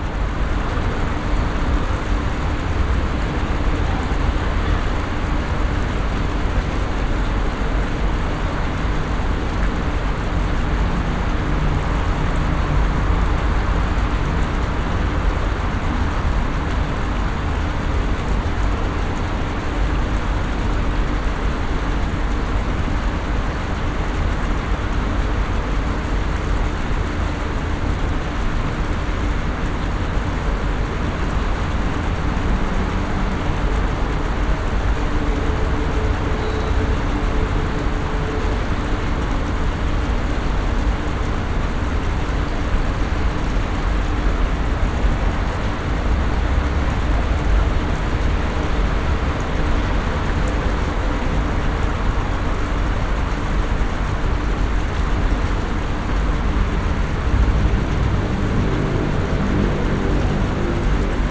(binaural) ambience of the hotel yard. a place meant to relax near a fountain in the back of the hotel. but there is a lot of traffic noise trapped here from a nearby expressway as well as from air conditioning units. it was rather impossible to relax and have a quiet conversation. (sony d50 + luhd pm-01)
Paleo Faliro, Greece, 6 November